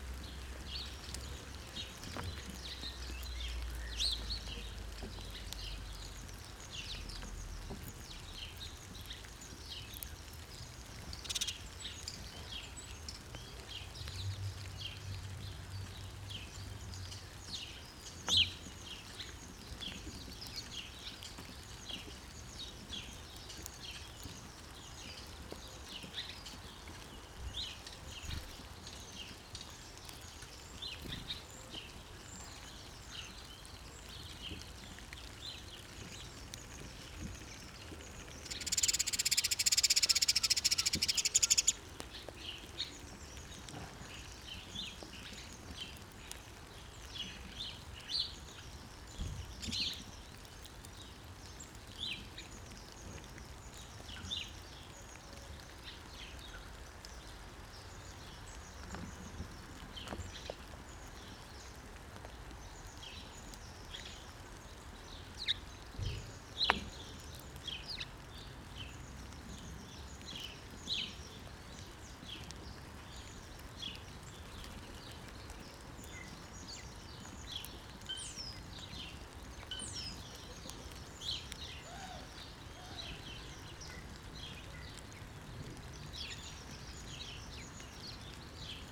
Harp Meadow Ln, Colchester, UK - An hour in the life of a bird-feeder 2019.
In my parents garden in Colchester it is full of wildlife, include great tits, blue tits, house sparrows, wood pigeons and doves, also the odd squirrel or two. In this recording I recorded early morning for about an hour, listening from inside recording over 100m of microphone cable. Had a few interesting sounds around the mic!
7 April